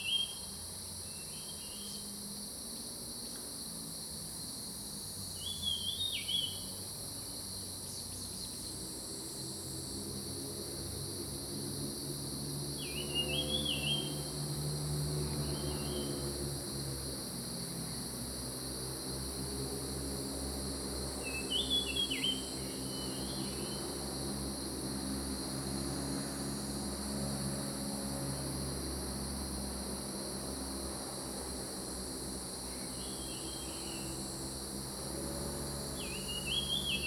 南投縣埔里鎮桃米里, Taiwan - Birds singing
Birds singing, Cicadas sound
Zoom H2n MS+XY
Puli Township, 桃米巷11號, 11 August 2015, 07:01